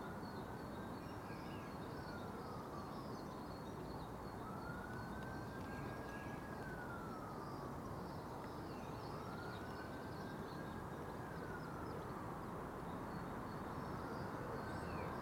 Contención Island Day 81 outer northeast - Walking to the sounds of Contención Island Day 81 Friday March 26th
The Drive Moor Crescent Moor Road South Rectory Road
A chill wind gusts
in the early dawn
gulls cry above the street
A street-front hedge
dark green dotted with red
a gatepost rots